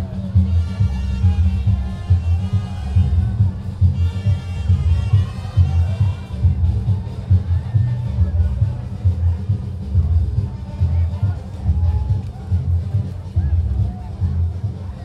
Audio capturado na Praço Antonio Rebolsas em Maragujipe - BA, no dia 02 de Março de 2014.